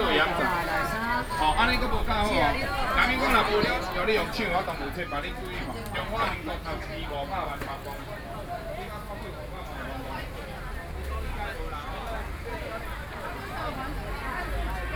{"title": "Linkou Rd., Linkou Dist. - Walking through the traditional market", "date": "2012-07-04 09:11:00", "description": "Walking through the traditional market\nSony PCM D50+ Soundman OKM II", "latitude": "25.08", "longitude": "121.39", "altitude": "253", "timezone": "Asia/Taipei"}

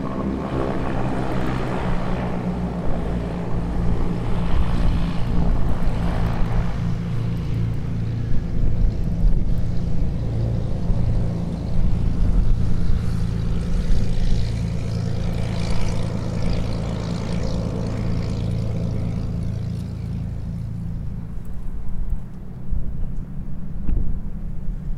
{"title": "Flugplatz Helgoland (HGL), Düne, Helgoland, Deutschland - flugzeuglandung", "date": "2012-12-09 12:45:00", "description": "flugplatz helgoland flugzeuglandung", "latitude": "54.19", "longitude": "7.91", "altitude": "2", "timezone": "Europe/Berlin"}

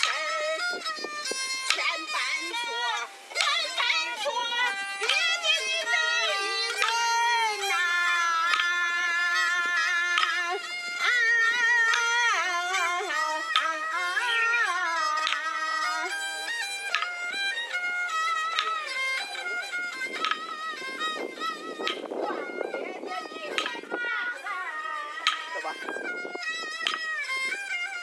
{"title": "中国河南省漯河市源汇区五一路377号 - Yu Opera (河南豫剧) performed by the unknown folks", "date": "2021-09-20 11:12:00", "description": "This is a general recording location. Can't remember the exact one. Yu opera or Yuju opera, sometimes known as Henan bangzi (Chinese: 河南梆子; pinyin: Hénán Bāngzi), is one of China's famous national opera forms, alongside Peking opera, Yue opera, Huangmei opera and Pingju. Henan province is the origin of Yu opera. The area where Yu opera is most commonly performed is in the region surrounding the Yellow River and Huai River. According to statistical figures, Yu opera was the leading opera genre in terms of the number of performers and troupes.", "latitude": "33.57", "longitude": "114.03", "altitude": "62", "timezone": "Asia/Shanghai"}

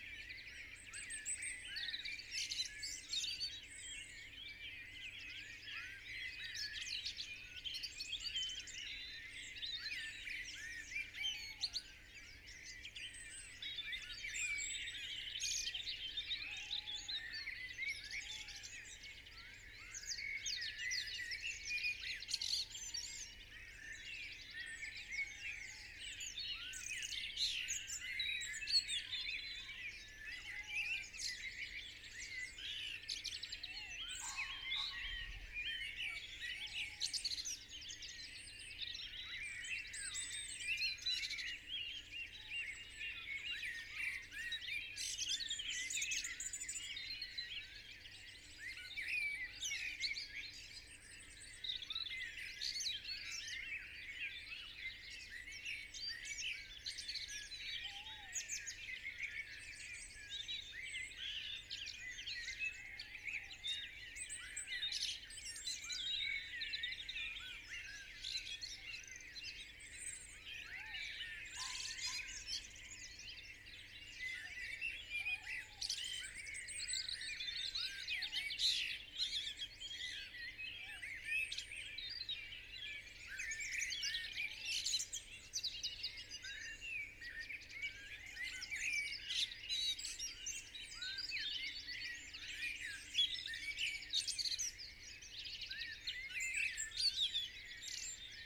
{"title": "Unnamed Road, Malton, UK - dawn chorus ... 2020:05:01 ... 04.17 ...", "date": "2020-05-02 04:17:00", "description": "dawn chorus ... from a bush ... dpa 4060s to Zoom H5 ... mics clipped to twigs ... bird song ... calls ... from ... blackbird ... robin ... wren ... tawny owl ... blackcap ... song thrush ... pheasant ... great tit ... blue tit ... dunnock ... tree sparrow ... collared dove ... wood pigeon ... some traffic ... quiet skies ...", "latitude": "54.12", "longitude": "-0.54", "altitude": "80", "timezone": "Europe/London"}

